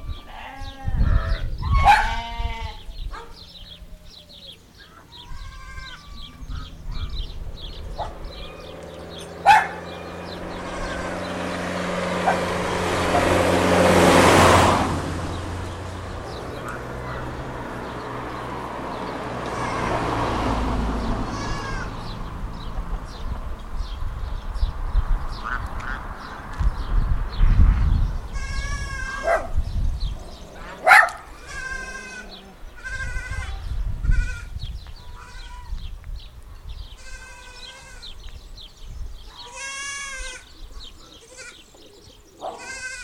a farm: our little friends, and cars
Province of Teramo, Italy